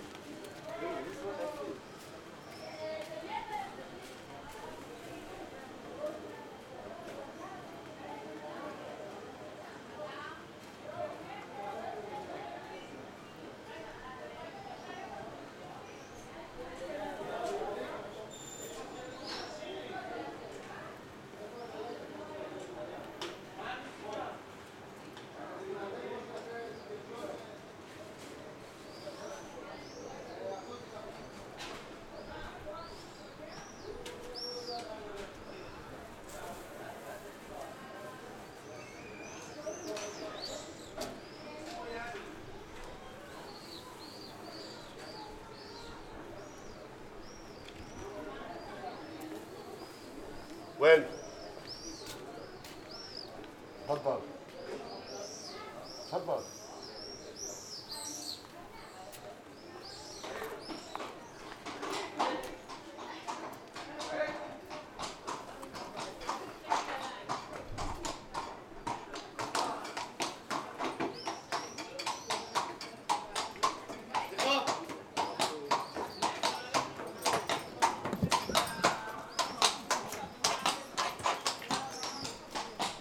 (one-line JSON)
{"title": "Asher St, Acre, Israel - Alley in Acre", "date": "2018-05-03 11:25:00", "description": "Alley, Horse, Tourist, Hebrew, Arabic, English, birds", "latitude": "32.92", "longitude": "35.07", "altitude": "9", "timezone": "Asia/Jerusalem"}